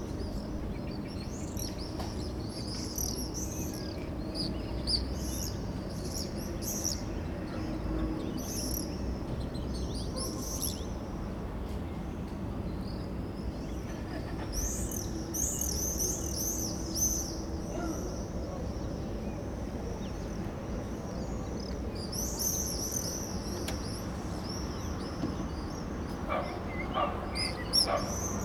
Štítného, Olomouc, Česká republika - birds, summer evening
birds recorded at the balcony on a summer evening
Olomouc-Nová Ulice, Czech Republic, 20 June, 21:00